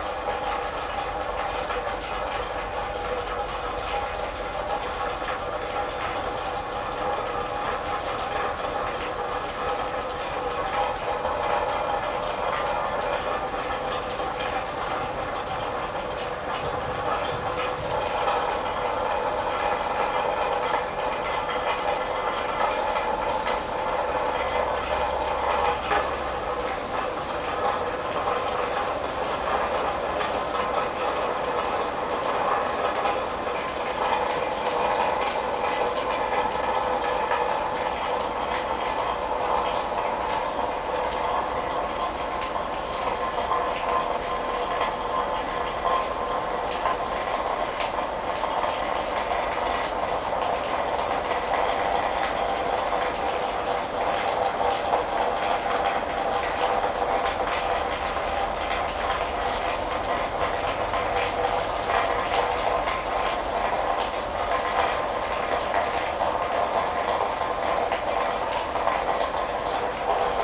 Yville-sur-Seine, France - Rain in a barn
We are hidden in a barn, during a powerful rain.